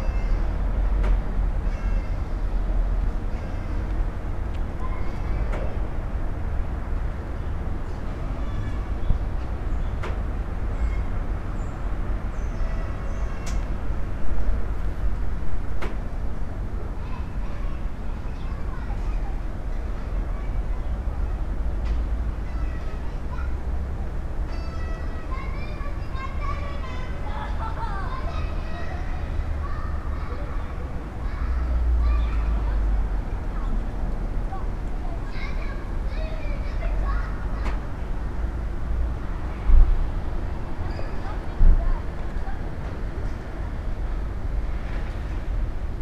Via Pasquale Besenghi, Trieste - remoteness, yard, kids, swing
Trieste, Italy, 7 August 2013